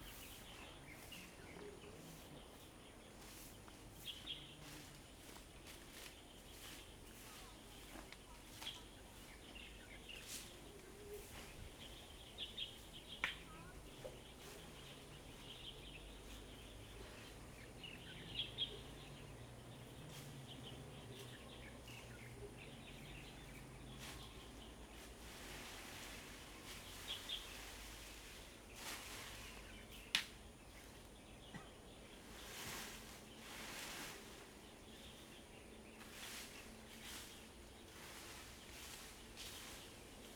鶴岡村, Rueisuei Township - in the Park
Birdsong, Traffic Sound, in the Park
Zoom H2n MS+ XY
Rueisuei Township, Hualien County, Taiwan, 8 October 2014, ~16:00